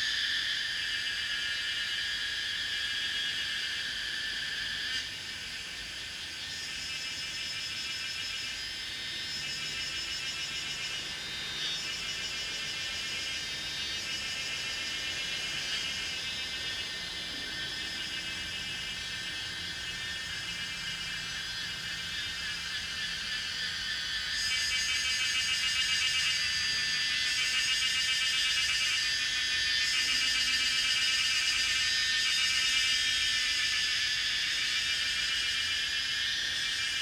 林頭坑, 桃米里 - Cicada sounds
Cicada sounds
Zoom H2n MS+XY
Nantou County, Puli Township, 桃米巷52-12號, 16 May, ~16:00